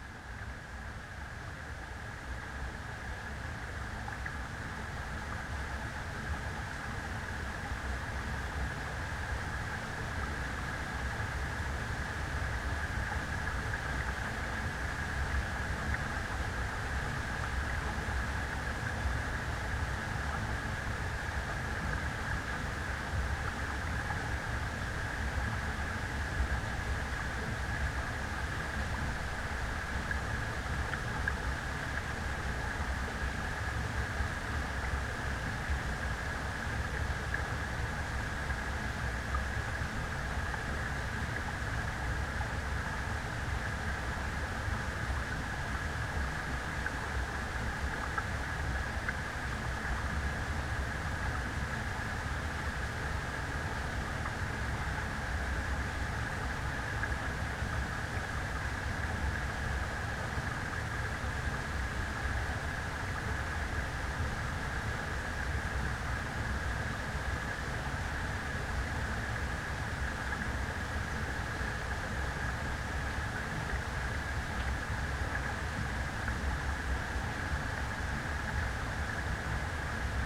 4 tracks: 2 omnis and 2 hydros on the dam